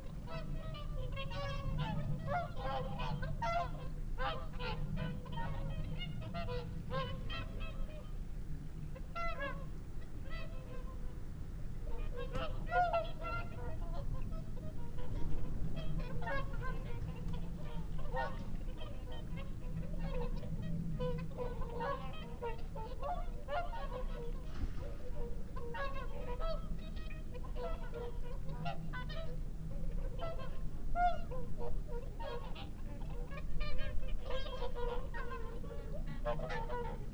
Dumfries, UK - whooper swan soundscape ...
whooper swan soundscape ... xlr sass to zoom h5 ... bird calls from ... curlew ... wigeon ... mallard ... time edited unattended extended recording ...
Alba / Scotland, United Kingdom